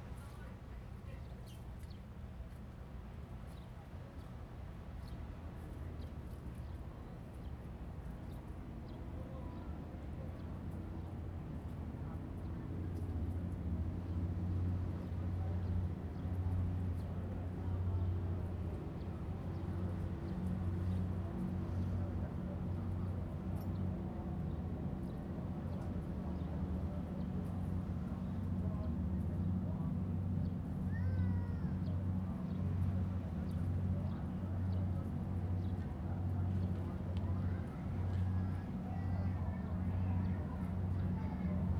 Birds singing, Tourists, Distance passenger whistle sound, Next to the temple
Zoom H2n MS+XY
Pingtung County, Taiwan